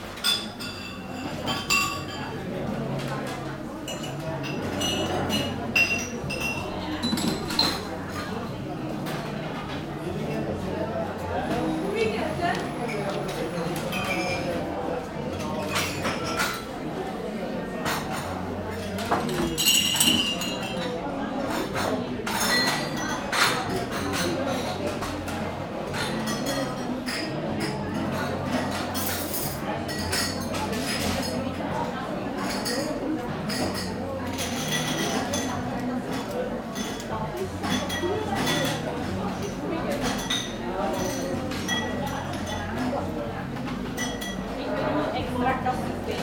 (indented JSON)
{
  "title": "Aalst, België - Into the bar",
  "date": "2019-02-23 11:30:00",
  "description": "Into the Café Safir. A busy atmosphere, with many elderly people having a good time at lunchtime.",
  "latitude": "50.94",
  "longitude": "4.04",
  "altitude": "14",
  "timezone": "GMT+1"
}